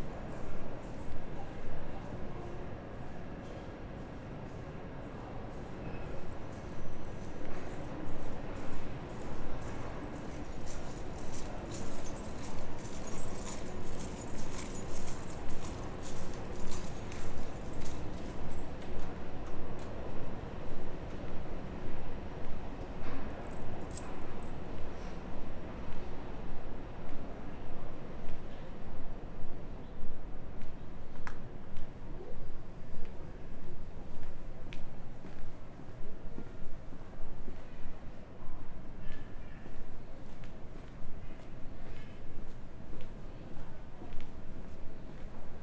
Frankfurt Airport (FRA), Frankfurt am Main, Deutschland - Airport of the Birds, Terminal 1, Hall B
During the Corona times there is not much traffic at the airport. The birds took over. Sometimes a crew crosses the hall, some passengers wait to drop their baggage, sometimes even with children. Or disabled people in wheel chairs are waiting for help, some talk to him, some noises in the background.